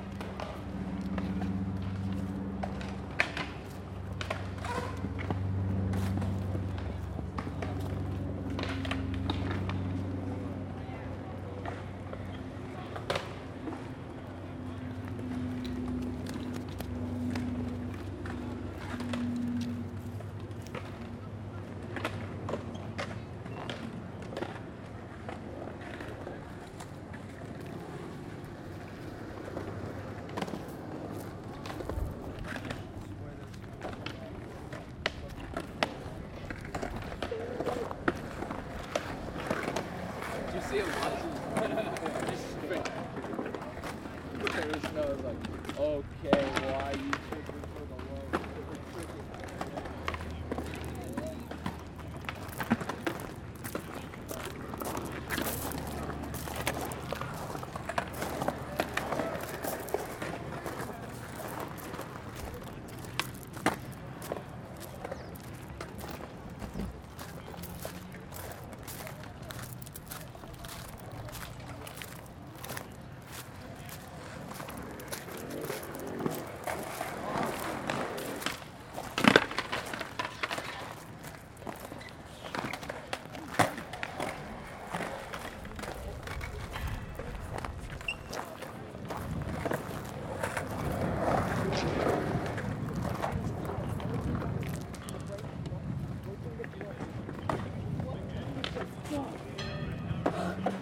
Part three of soundwalk in Woodland Park for World Listening Day in Seattle Washington.